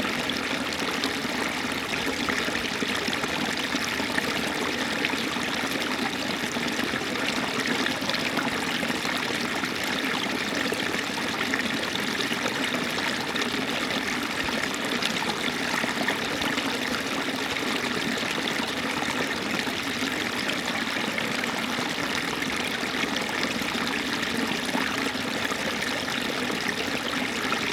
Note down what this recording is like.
Der Klang eines kleinen Bachs, der parallel zu dem früheren Schienenweg liegt, der nun zu einem asphaltierten Fahrradweg umgenutzt wurde. Das Wasser des Bachs stammt aus dem Ablauf des nahe liegenden ehemaligen Eisenbahntunnels. The sound of a small stream flowing parallel to the former railway tracks, that are now asphalted and used as a bicycle trail. The water of the stream exits from an former railway tunnel nearby.